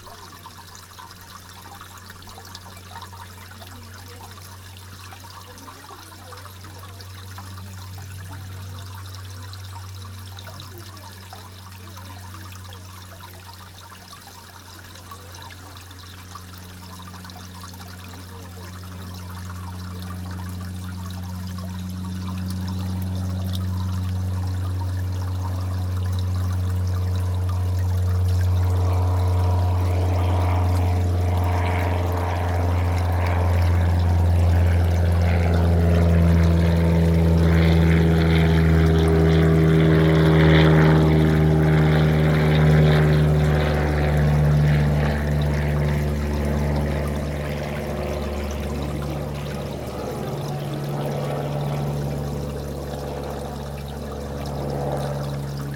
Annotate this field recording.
This is from 2012. I was recording the sound of a small water feature at Coleton Fishacre when a light aircarft flew very low across the 'sound stage' from right to left.